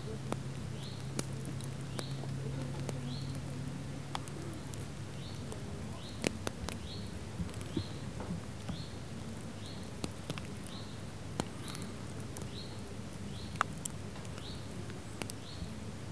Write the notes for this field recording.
campfire burning out. sparse drops of rain falling on the hot ashes, sizzling and evaporating.